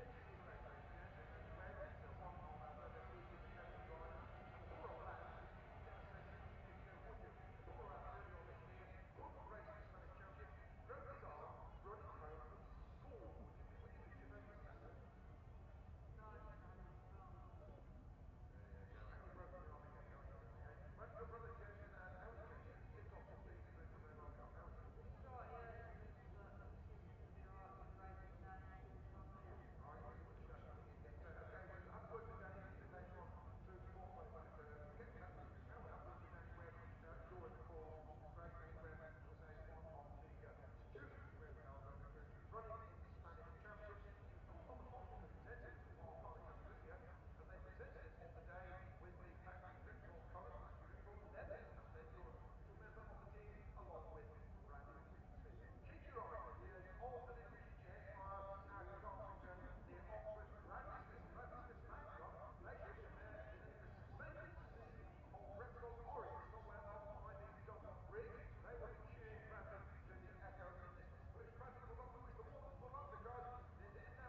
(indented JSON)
{
  "title": "Unnamed Road, Derby, UK - british motorcycle grand prix 2006 ... 125 race ...",
  "date": "2006-07-02 11:00:00",
  "description": "british motorcycle grand prix 2006 ... 125 race ... one point stereo mic to mini disk ...",
  "latitude": "52.83",
  "longitude": "-1.37",
  "altitude": "81",
  "timezone": "Europe/London"
}